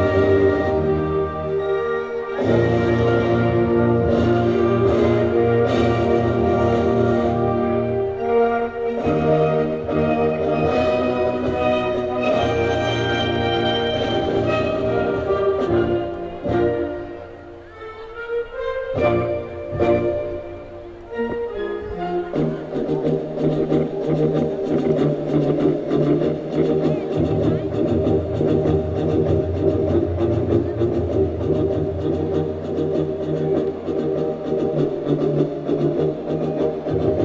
Cavalhadas - Festa Popular
festa Cavalhadas em Goiás